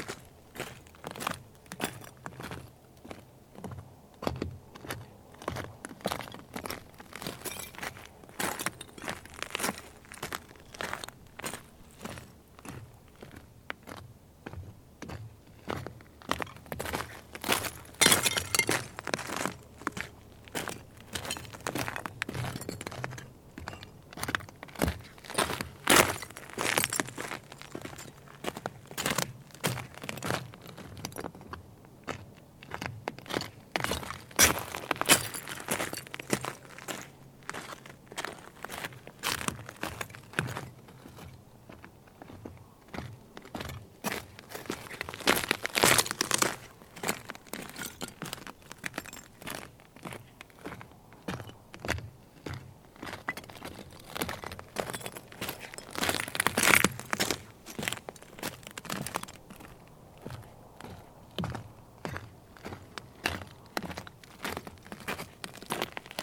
Bartlett, CA, USA - Walking on Owens Dry Lake
Metabolic Studio Sonic Division Archives:
Walking on dry salt flat of Owens Dry Lake. Recorded with Zoom H4N
California, United States, 15 September